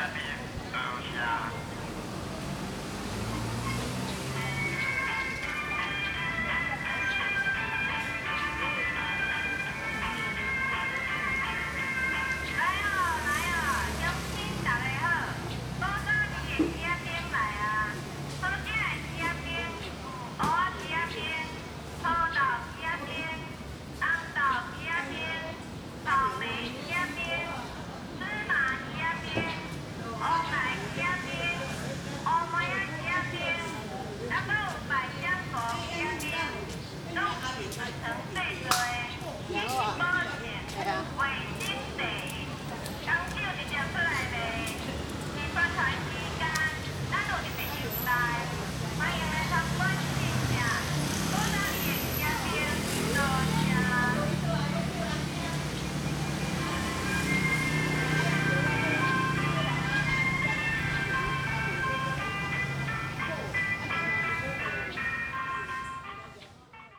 後竹圍公園, Sanchong Dist., New Taipei City - Trafficking sound of ice cream
in the Park, Traffic Sound, Trafficking sound of ice cream
Sony Hi-MD MZ-RH1 +Sony ECM-MS907
New Taipei City, Taiwan